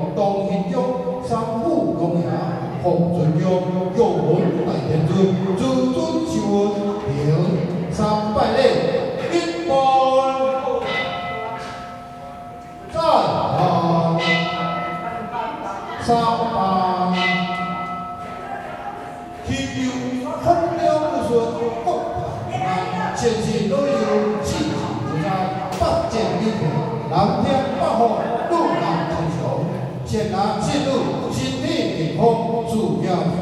In the temple
Zoom H4n+ Rode NT4
Gongliao District, New Taipei City, Taiwan, 21 November, 14:52